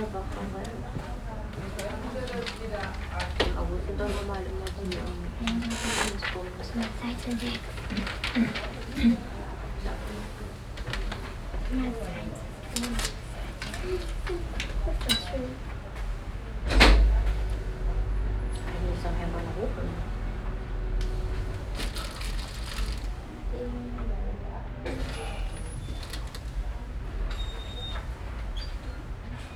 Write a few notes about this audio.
Inside the city office - the sound of an electronic bell signalizing the next numbers in the waiting row, steps in the hallway, whispering voices of waiting people and door sounds. soundmap nrw - social ambiences and topographic field recordings